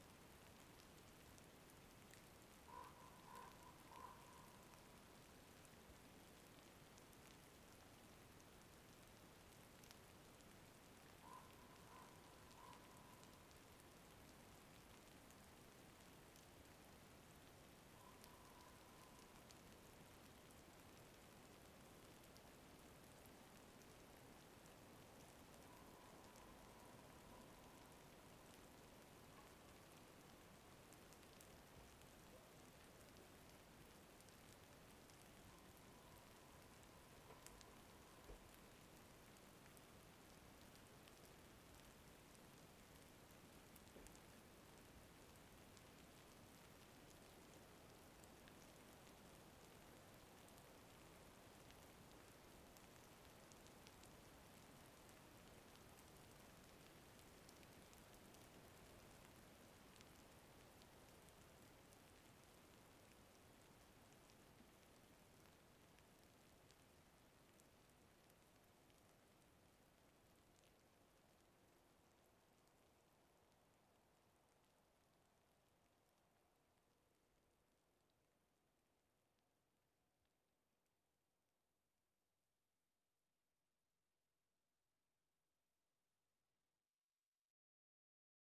Winter time on the shore of lake Saimaa. Thawing ice combined with slushy rain caught by branches of overarching trees. Several calls of ravens and other unidentified birds (send me an email of you recognise it).
M/S recording (Schoeps rig). Post: Excerpting, EQing, slight Multiband Expansion. No overlay, no cut.